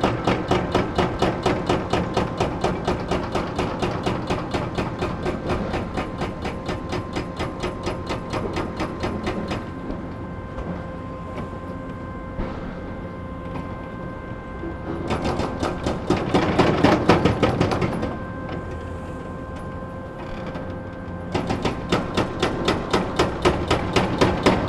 berlin, sonnenallee: aufgegebenes fimengelände - A100 - bauabschnitt 16 / federal motorway 100 - construction section 16: demolition of a logistics company
excavator with mounted jackhammer demolishes building elements, echo of the jackhammer, distant drone of a fog cannon, noise of different excavators
february 18, 2014
Deutschland, European Union, 18 February, 14:17